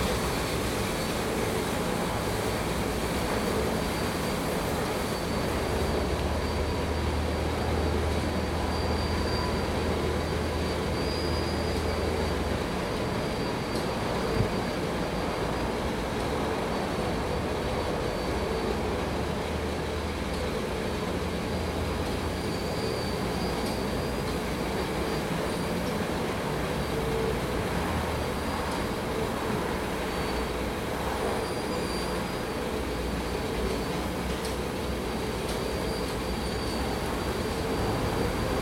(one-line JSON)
{"title": "West Hollywood, Kalifornien, USA - car wash", "date": "2014-01-07 15:49:00", "description": "santa monica boulevard, west hollywood; car wash, distant traffic, helicopter;", "latitude": "34.09", "longitude": "-118.38", "altitude": "71", "timezone": "America/Los_Angeles"}